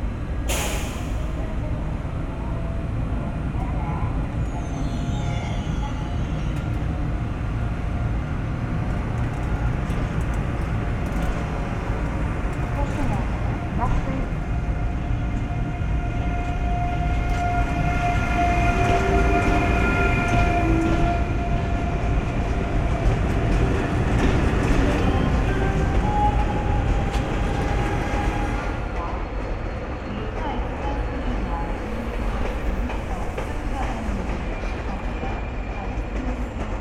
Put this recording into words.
Départs et arrivées des trains, voyageurs annonces... Intérieur et extérieur de la gare